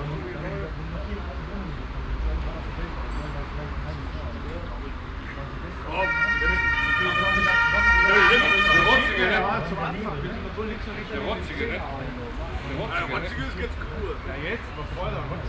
Stoppenberg, Essen, Deutschland - essen, stoppenberg, kiosk and bus station
An einer Trinkhalle nage einer Busstation. Der Klang der Stimmen der Stammgäste die davor stehen und ein startender und abfahrender Bus.
At a kiosk. The sound of the voices of the frequenters standing in front and a bus starting and departing.
Projekt - Stadtklang//: Hörorte - topographic field recordings and social ambiences